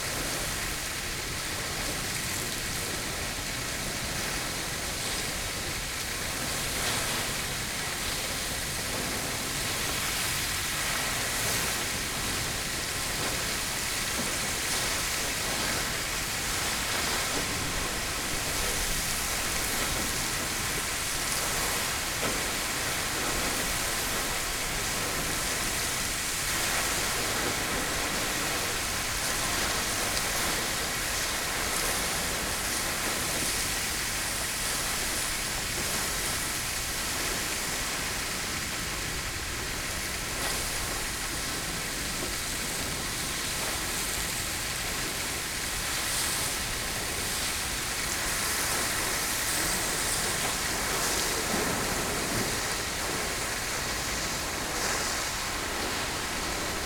Chatsworth, UK - Emperor Fountain ...
Emperor Fountain ... Chatsworth House ... gravity fed fountain ... the column moves in even the slightest breeze so the plume falls on rocks at the base ... or open water ... or both ... lavalier mics clipped to sandwich box ... voices ... background noises ...